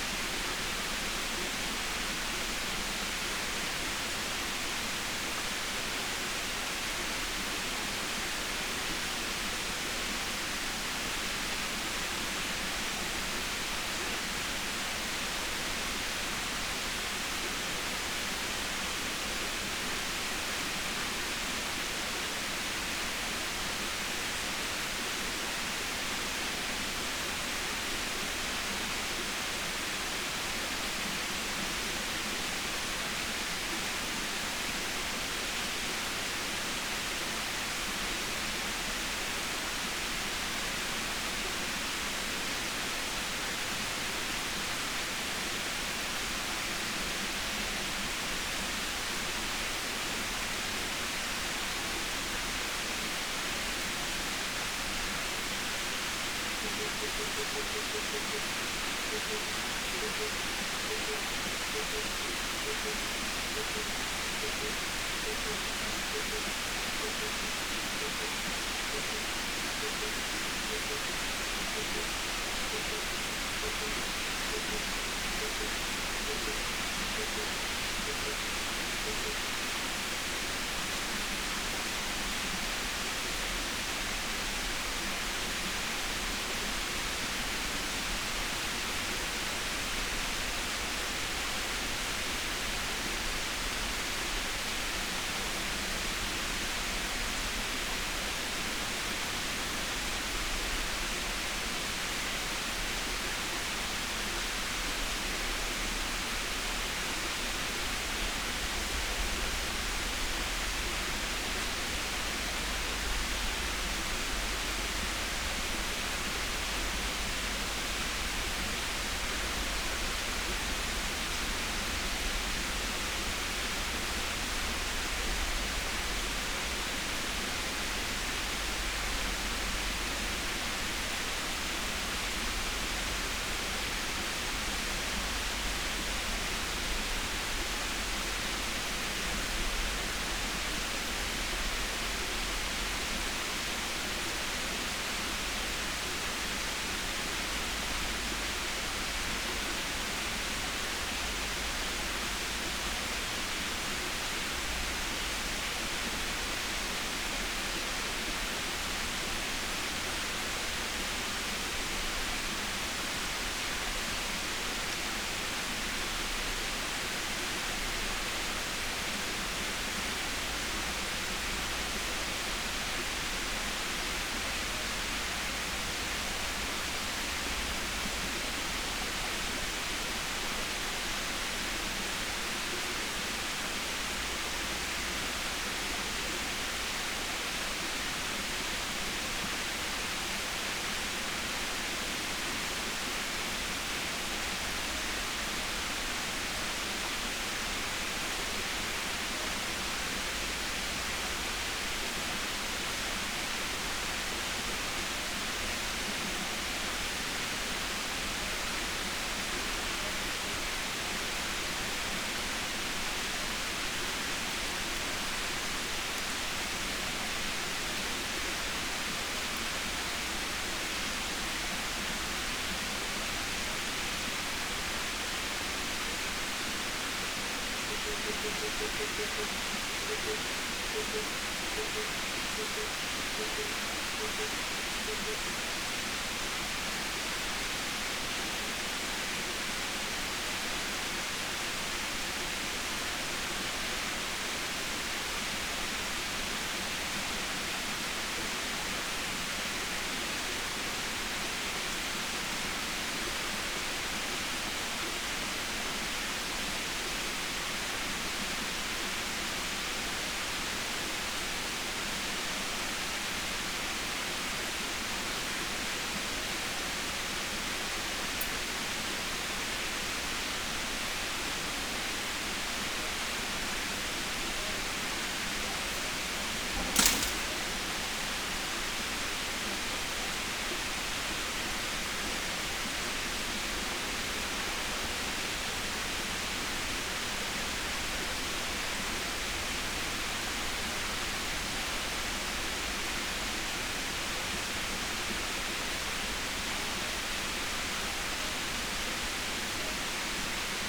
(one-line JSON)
{"title": "Secluded valley bleeping creature", "date": "2021-06-06 11:00:00", "description": "broadband masking noise from a waterfall...various bird sounds penetrate...branch falls...", "latitude": "37.93", "longitude": "127.65", "altitude": "204", "timezone": "Asia/Seoul"}